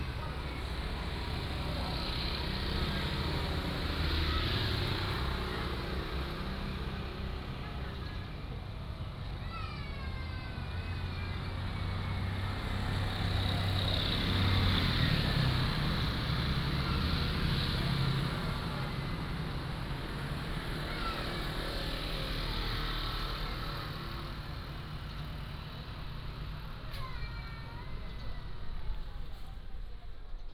In the fishing port of Docklands, Ferry whistle